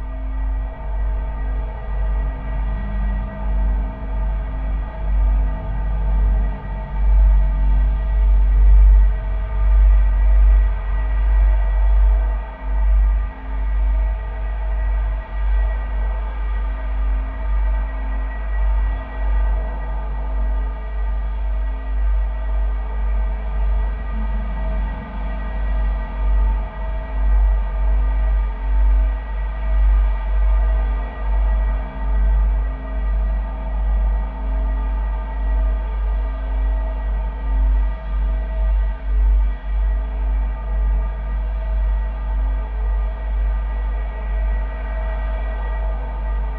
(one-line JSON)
{"title": "Gymea, NSW, Australia - Bridge across from Gymea Technology High School, the high school I went to for 5 years", "date": "2014-09-07 13:55:00", "description": "This is the bridge that I used to cross nearly every day to go to high school. I would have made a longer recording but it was a bit too windy for the microphones, I will return though!\nRecorded with two JRF contact microphones (c-series) into a Tascam DR-680.", "latitude": "-34.03", "longitude": "151.08", "altitude": "81", "timezone": "Australia/Sydney"}